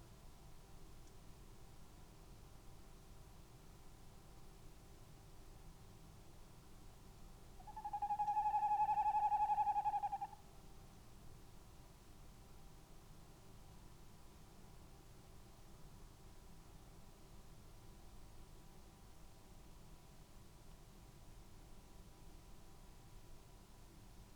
tawny owl soundscape ... song and calls from a pair of birds ... xlr mics in a SASS on a tripod to Zoom H5 ... bird calls ... song ... from ... lapwing ... wood pigeonm ... and something unidentified towards the end ... background noise ...
Malton, UK, April 2020